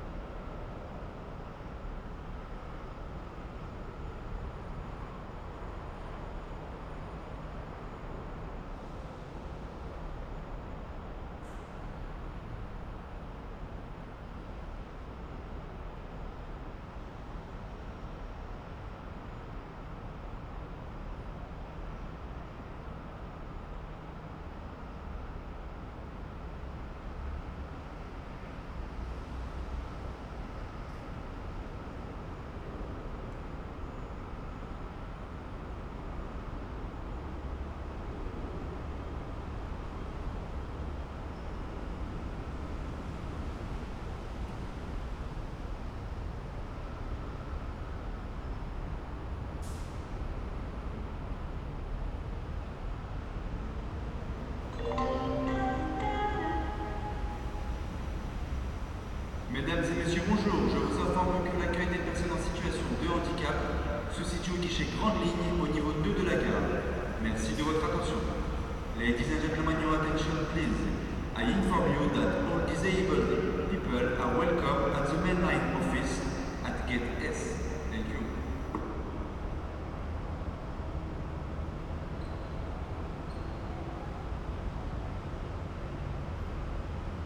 Gare Aéroport Charles De Gaulle 2 - TGV - CDG airport – TGV station
Large train station hall atmostphere, almost unmanned.Traffic noise from above. SNCF announcements and arrival of TGV on platform.
Ambiance de gare vide. Bruit de trafic, venant de dessus. Annonces SNCF et arrivée du TGV sur le quai.